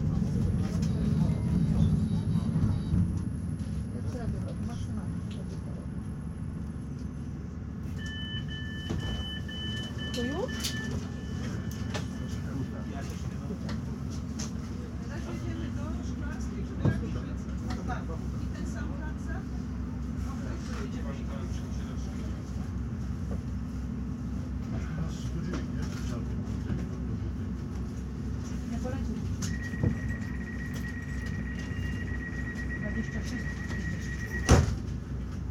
Binaural recording of a train ride from Szklarska Poręba Huta -> Szklarska Poręba Górna.
Recorded with DPA 4560 on Sound Devices MixPre-6 II.